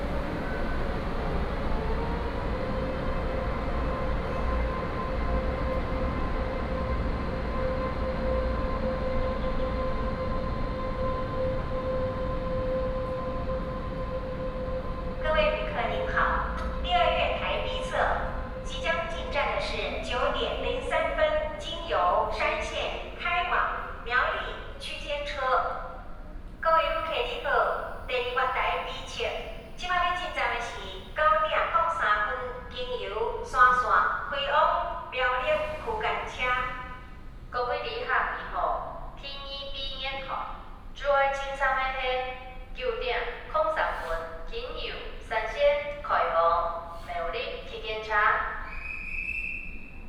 Zhunan Station, 苗栗縣竹南鎮竹南里 - walk to the platform
Train arrives and leaves, Station information broadcast, At the station platform
Miaoli County, Taiwan, 18 January 2017